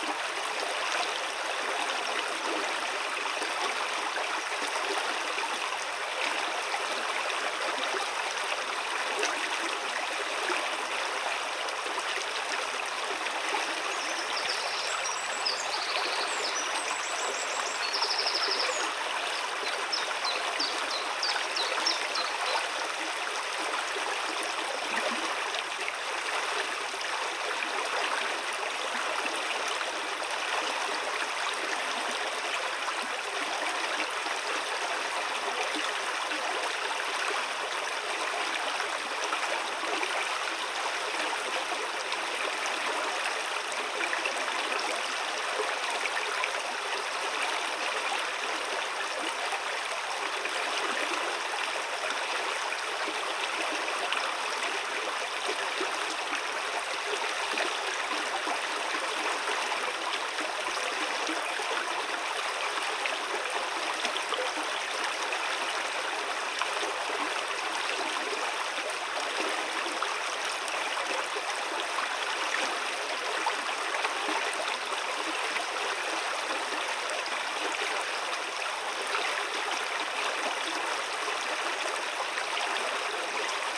Diktarai, Lithuania, river Laimutis
Ambisonic recording at river Laimutis, near Buivydai mound